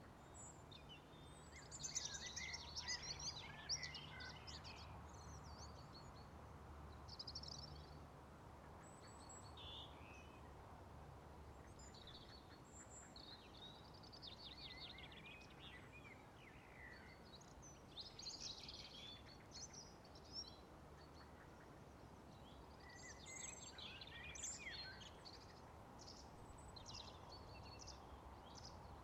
Birds of Corcomroe Abbey, Co. Clare, Ireland
spring birds near the abbey ruins
Munster, Republic of Ireland